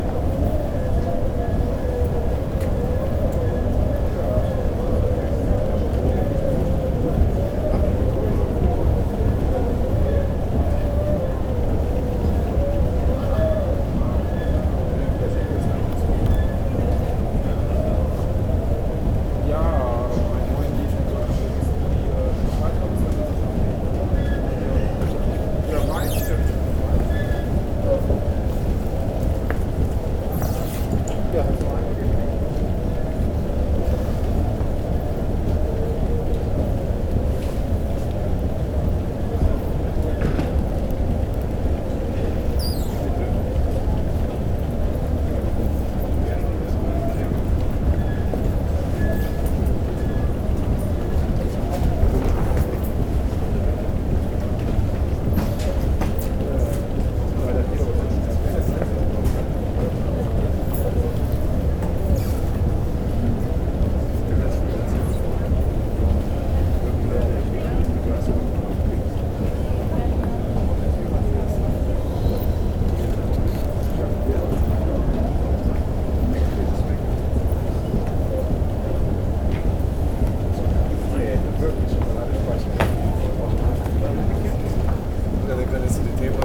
Düsseldorf, airport, luggage transportation belt - düsseldorf, airport, luggage transportation belt
the sound of the luggage transportation belt. in tje distance waiting and talking travellers.
soundmap nrw - social ambiences and topographic field recordings